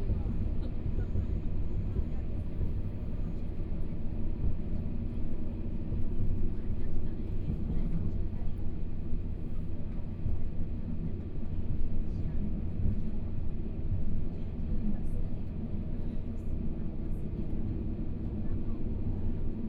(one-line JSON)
{"title": "Fuli Township, Hualien County - Taroko Express", "date": "2014-01-18 11:47:00", "description": "Interior of the train, from Chishang Station to Fuli Station, Binaural recordings, Zoom H4n+ Soundman OKM II", "latitude": "23.20", "longitude": "121.27", "timezone": "Asia/Taipei"}